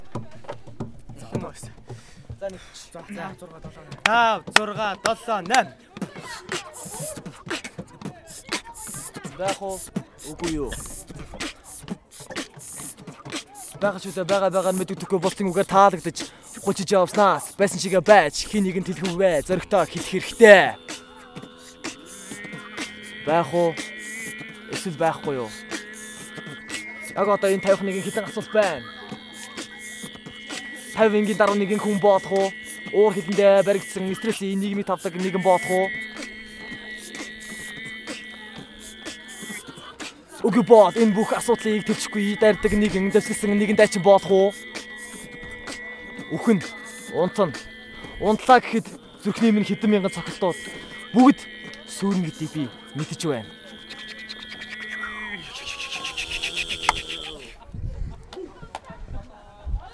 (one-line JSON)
{"title": "Hamlet-Rap at Zaisan, Ulaanbaatar, Mongolei - Hamlet", "date": "2013-09-08 16:29:00", "description": "Some Students rapping the famous Hamlet-monologue during the performance 'I am Hamlet'", "latitude": "47.88", "longitude": "106.92", "altitude": "1356", "timezone": "Asia/Ulaanbaatar"}